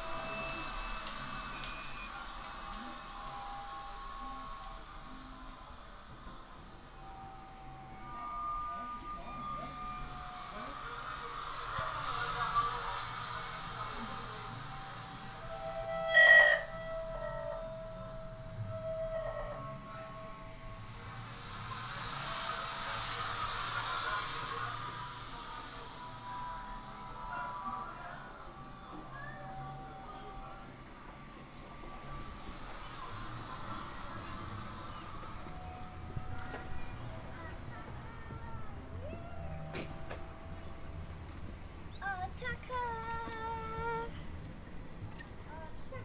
Degesch, eine Audioinstallation im Marzili
Jetzt Kunst 2011, Kunstausstellung in der Nachsaison, Rudy Decelière, Audiointallation, installation sonore, Degesch, le son est und nuée invisible, emplissant lair
16 October 2011, 15:40